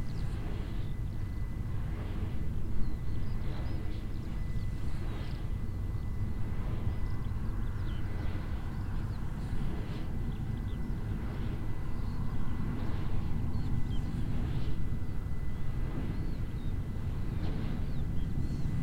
Standing at the pole of the wind energy mill. A motor plane flying across the sky, birds chirping and the movement sound of the mills wings.
Heiderscheid, Windkraftwerk
Am Mast eines Windkraftwerkes. Ein Motorflugzeug fliegt am Himmel, Vögel zwitschern und das Geräusch der sich bewegenden Windrotoren.
Project - Klangraum Our - topographic field recordings, sound objects and social ambiences
Luxembourg, 8 August 2011, 19:03